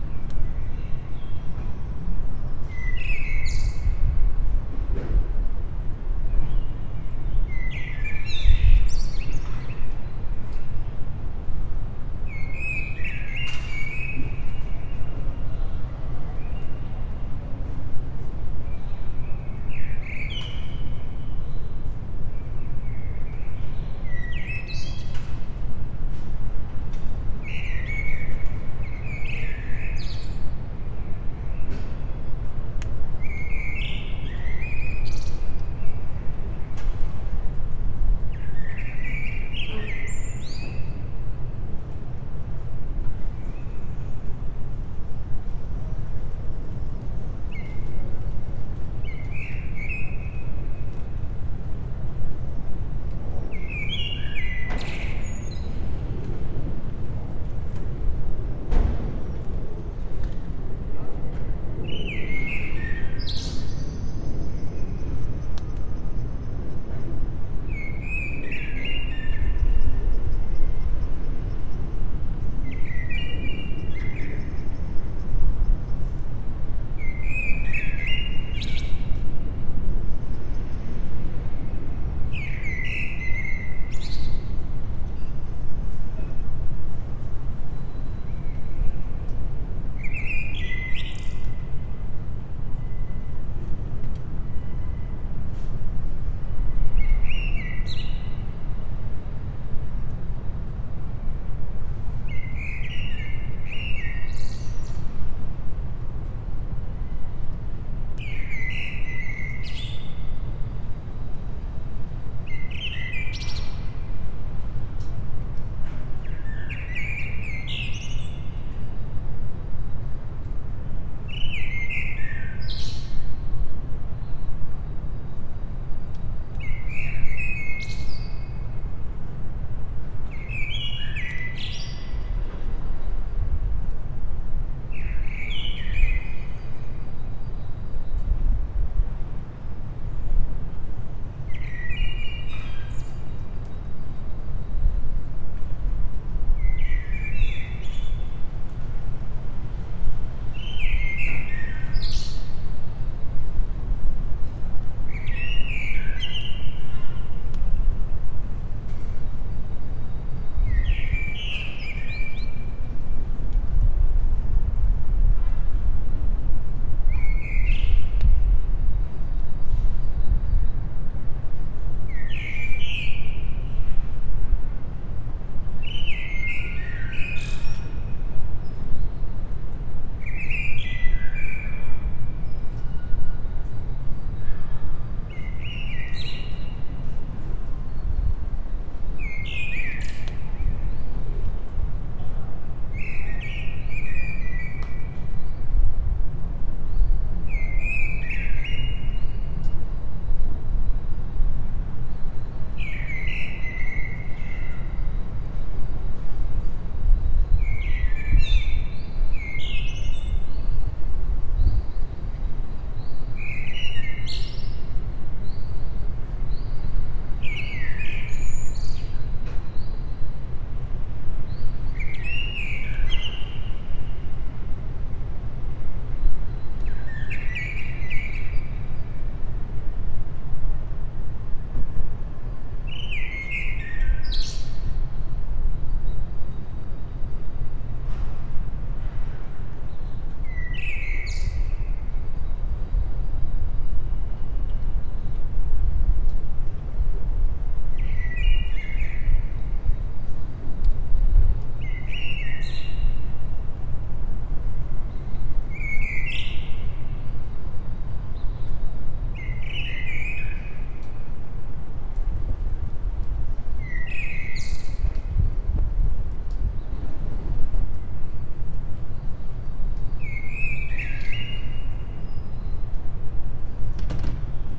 Rue Clavel, Paris, France - Paris 19th, calm courtyard
Rainy spring day, in front of the cherry blossom, MS recording with Oktava mk012 (cardio 8 adaptator + supercardioïd) from the first floor
Île-de-France, France métropolitaine, France, 5 April 2022